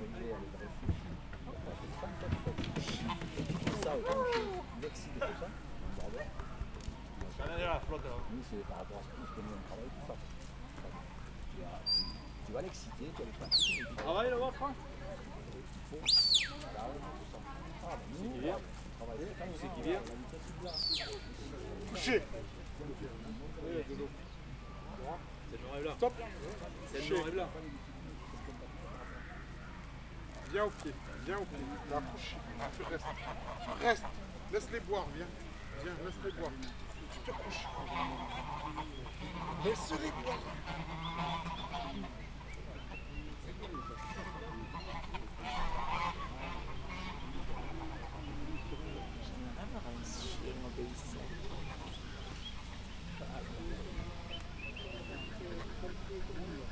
Nages Maison de Payrac
Fête paysanne Maison de Payrac, démonstration de travail de Border, chiens de troupeaux.
Nages, France, 13 August 2011